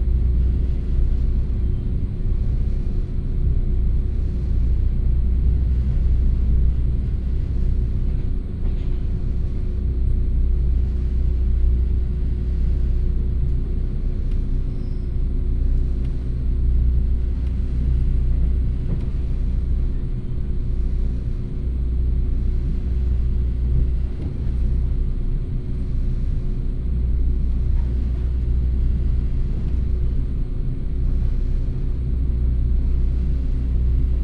Changhua City, Changhua County - In the train compartment
In the train compartment